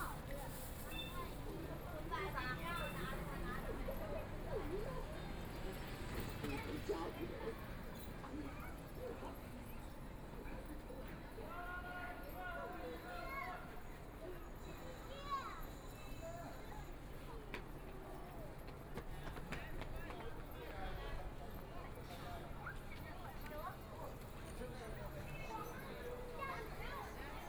Guangqi Road, Shanghai - Walking through the night market
Walking in the street market, Binaural recording, Zoom H6+ Soundman OKM II